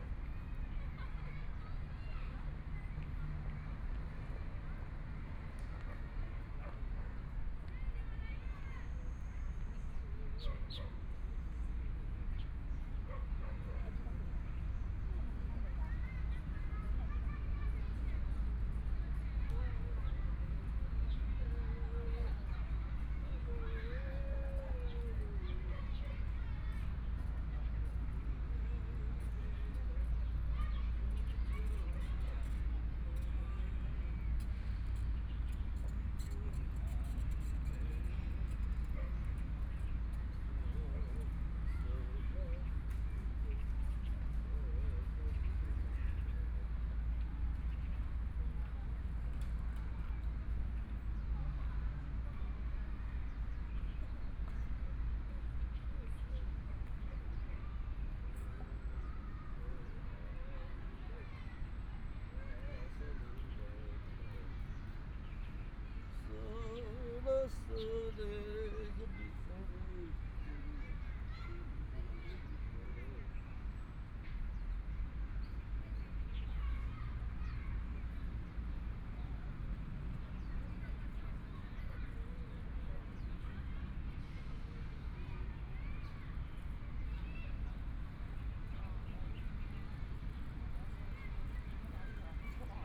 榮星公園, Zhongshan District - in the Park
Afternoon park, Dogs barking, People walking in the park and rest, Traffic Sound, Binaural recordings, Zoom H4n + Soundman OKM II
Taipei City, Zhongshan District, 榮星花園, 20 January 2014